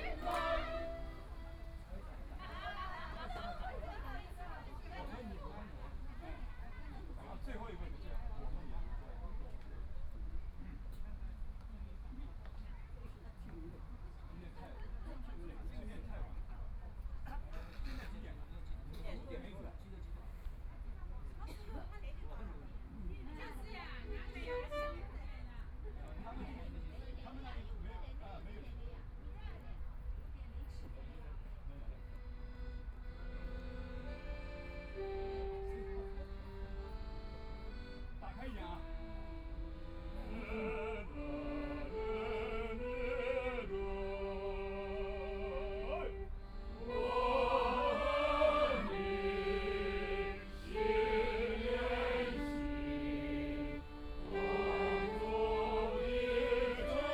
Huangxing Park, Shanghai - Practice singing
A group of elderly people are practicing singing chorus, Binaural recording, Zoom H6+ Soundman OKM II ( SoundMap20131122- 6 )
Shanghai, China